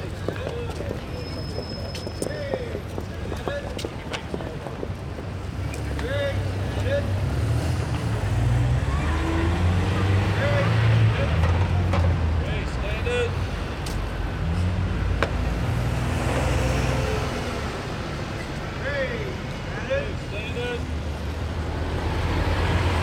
Liverpool Street station, Bishopsgate, City of London, Greater London, Vereinigtes Königreich - Liverpool Street station, London - Street vendors distributing the 'Free Standard'
Liverpool Street station, London - Street vendors distributing the 'Free Standard'. Street cries, traffic, chatter, steps, passers-by, ambulance.
[Hi-MD-recorder Sony MZ-NH900, Beyerdynamic MCE 82]